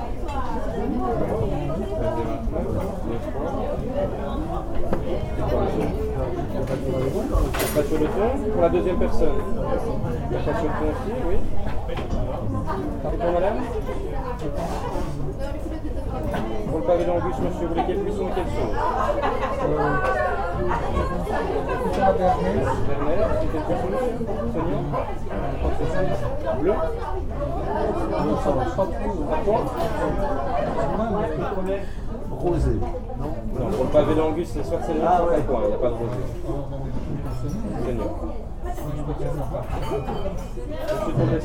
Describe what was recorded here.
Very busy restaurant on a sunny sunday afternoon.